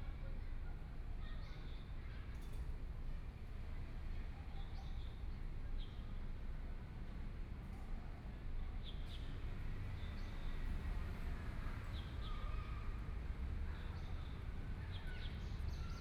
YiJiang Park, Taipei City - Sitting in the park
Sitting in the park, Traffic Sound
Please turn up the volume
Binaural recordings, Zoom H4n+ Soundman OKM II
Taipei City, Taiwan, 17 February 2014, 16:27